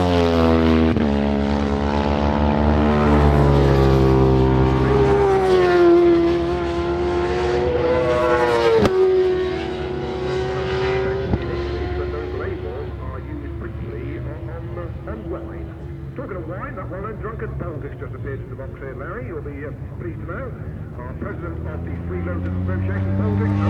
Silverstone Circuit, Towcester, United Kingdom - World SuperBikes 2003 ... Qualifying ...
World Superbikes 2003 ... Qualifying ... part two ... one point stereo mic to minidisk ...
14 June